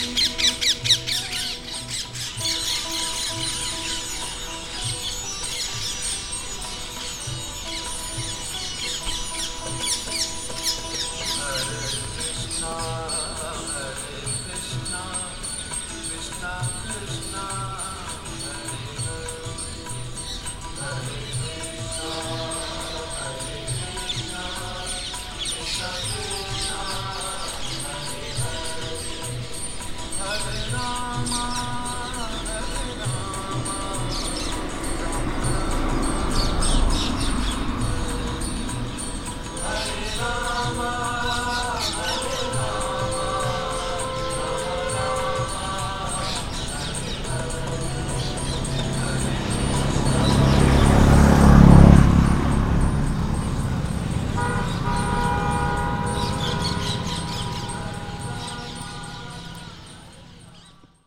Gwalior Fort, Gwalior, Madhya Pradesh, Inde - Devotional to Krishna
This is the end of the afternoon, a man is lying on a bed next to a temple listening to a devotional song dedicated to Krishna. Behind the temple stands a tank covered by water lilies.
Madhya Pradesh, India, October 2015